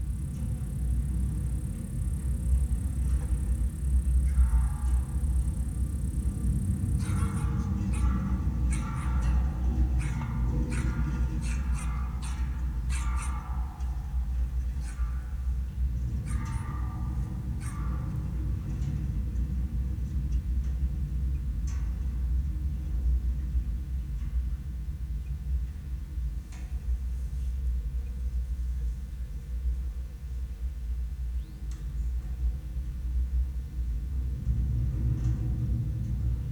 Lithuania, Biliakiemis, a wire
a wire holding abandoned metallic water tower